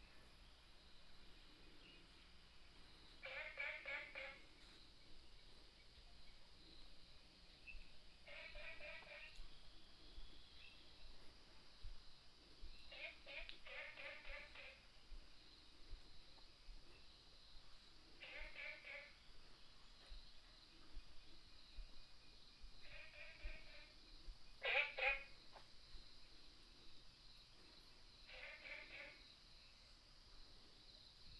TaoMi 綠屋民宿, Nantou County - Frogs sound
Frogs sound, walking around at the Hostel
28 April 2015, 23:32